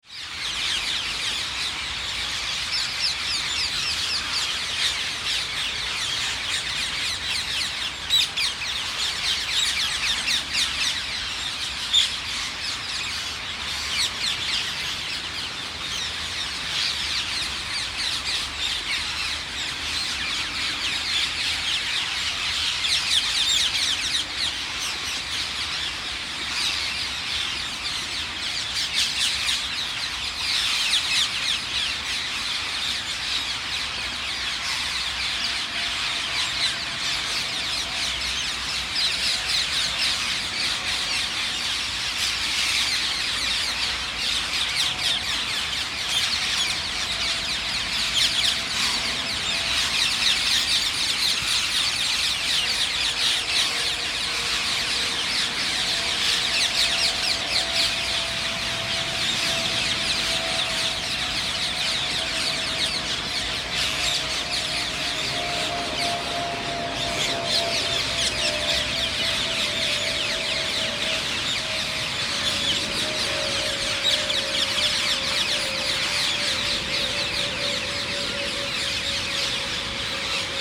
Garden of Remembrance, London Borough of Lewisham, London, UK - Thousand strong Ring-necked Parakeet Roost with Plane
The Hither Green Cemetery is under the flight path into Heathrow airport. There is hardly a break in the aircraft that pass overhead. The planes are loud but so are the Parakeets. The rain is inaudible in comparison.